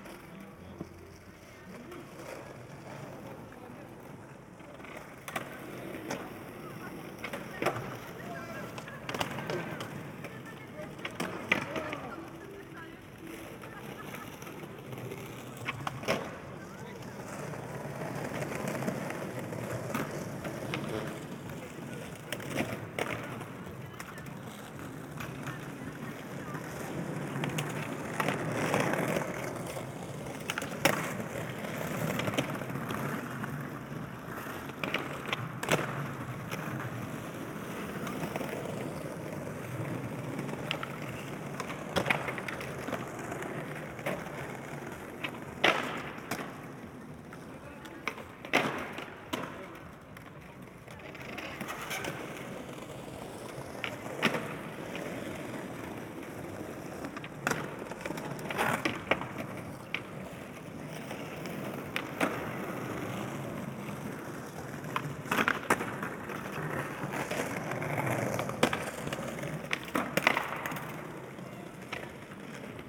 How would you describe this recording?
Skateboarding on the esplanade, sunny day. Tech Note : Ambeo Smart Headset binaural → iPhone, listen with headphones.